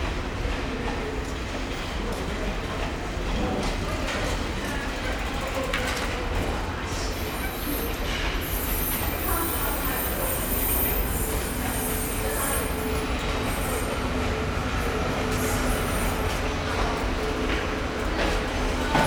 Sanmin, Kaohsiung - The entrance to the underground passage